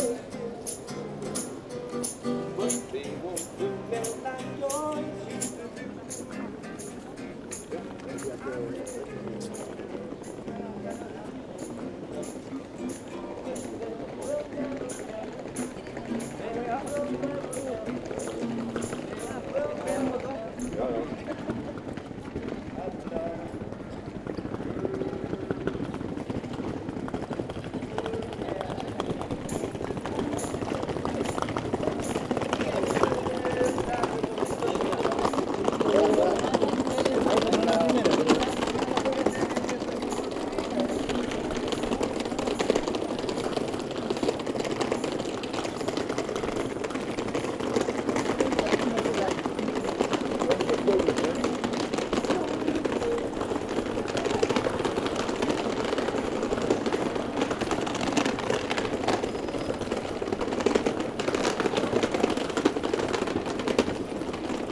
Brugge, België - Street musicians
Street musician with guitar and after a walk in the center, a street musician plays hang, a rather particular rhythmic and melodious instrument. It’s the troubadour Curt Ceunen.